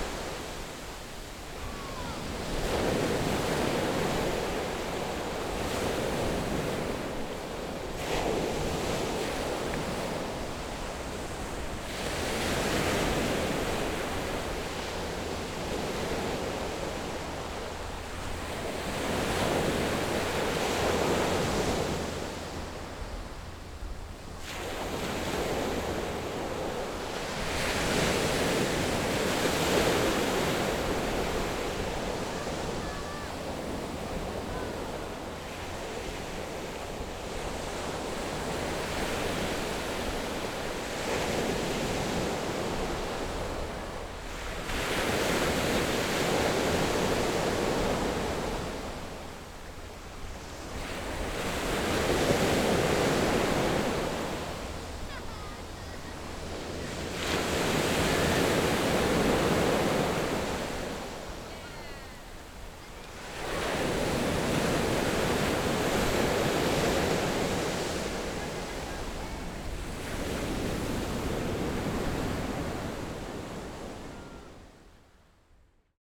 {
  "title": "新城鄉順安村, Hualien County - sound of the waves",
  "date": "2014-08-27 14:16:00",
  "description": "Sound of the waves, The weather is very hot\nZoom H6 MS+Rode NT4",
  "latitude": "24.11",
  "longitude": "121.64",
  "altitude": "3",
  "timezone": "Asia/Taipei"
}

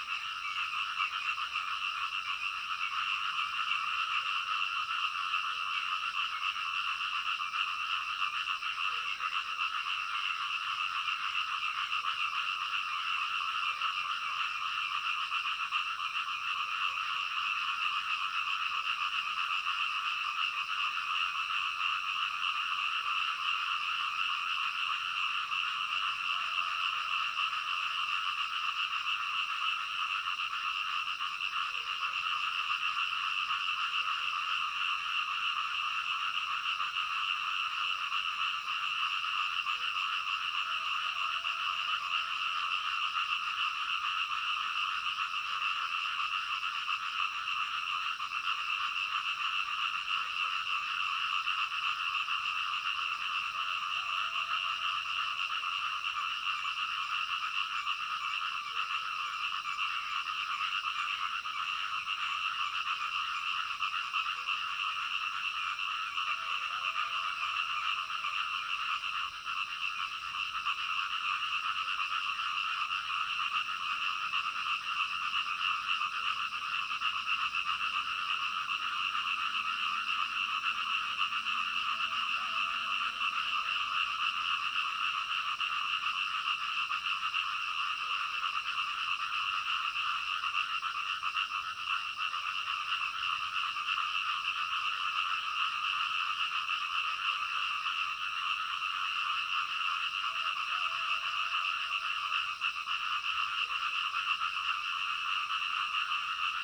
{
  "title": "Zhonggua Rd., Puli Township, Nantou County - Frogs chirping",
  "date": "2015-06-11 03:57:00",
  "description": "Frogs chirping, Early morning\nZoom H2n MS+XY",
  "latitude": "23.94",
  "longitude": "120.92",
  "altitude": "503",
  "timezone": "Asia/Taipei"
}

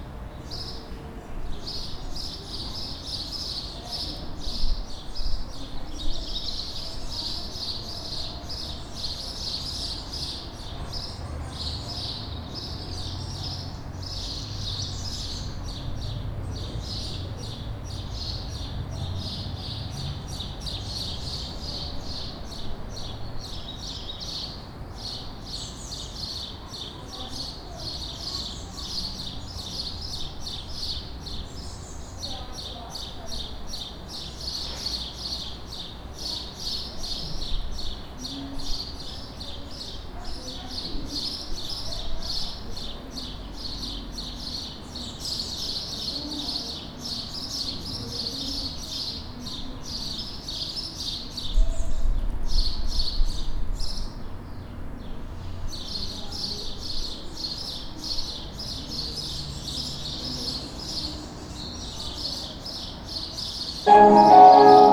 {
  "title": "Ecole Mermoz, Schiltigheim, France - Birds in the Schoolyard",
  "date": "2016-05-12 16:31:00",
  "description": "Birds in the schoolyard of Mermoz Elementary School, while children are in classrooms, quiet and calm.\nRecorded with ZOOM-H4.",
  "latitude": "48.60",
  "longitude": "7.74",
  "altitude": "141",
  "timezone": "Europe/Paris"
}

